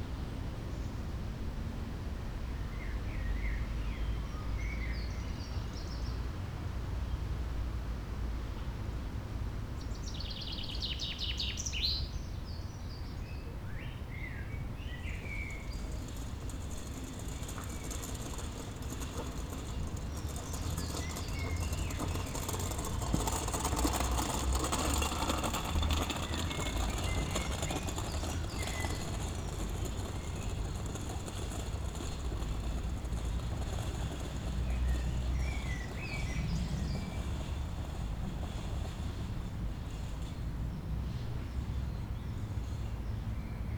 singing birds, old man with trolley
the city, the country & me: may 7, 2011